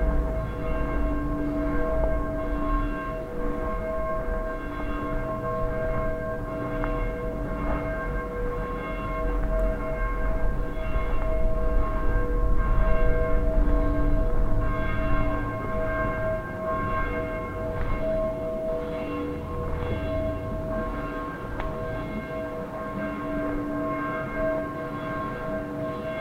Franciscans chruch, Przemyśl, Poland - (74 BI) Bells on Christmas eve
Binaural recording of bells in the evening of first Christmas day.
Recorded with Soundman OKM on Sony PCM D100.